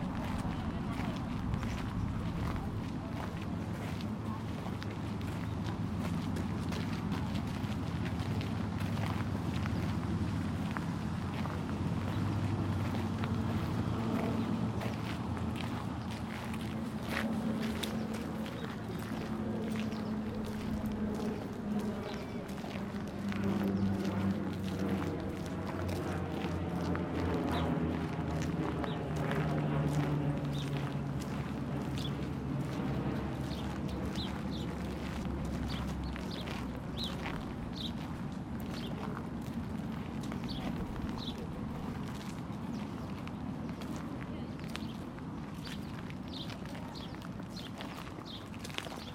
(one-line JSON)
{"title": "Greenlake Park, Seattle Washington", "date": "2010-07-18 12:00:00", "description": "Part one of a soundwalk on July 18th, 2010 for World Listening Day in Greenlake Park in Seattle Washington.", "latitude": "47.67", "longitude": "-122.34", "altitude": "52", "timezone": "America/Los_Angeles"}